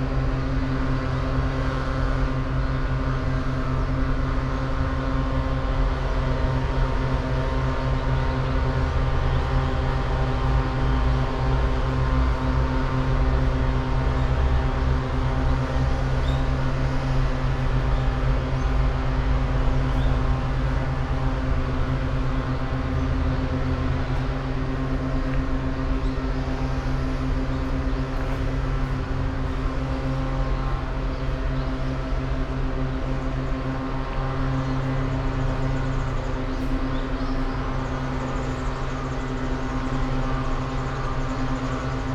shusui-tei, Imperial Palace, Kyoto - autumn sounds